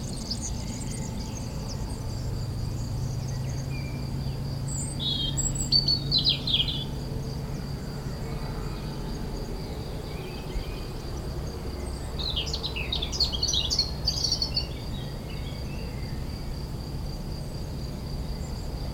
La Couarde-sur-Mer, France - Abandoned camping
Into an abandoned camping, which was very-very severely flooded in 2010, the excited European Goldfinch is singing on the top of a tree, near the old closed entrance of the camping.